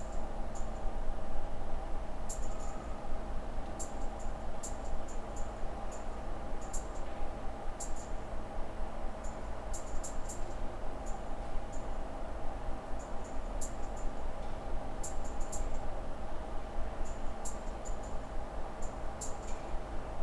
Stotis, Vilnius, Lithuania - Vilnius train station platform
Vilnius train station platform sounds; recorded with ZOOM H5.
30 January 2021, 17:26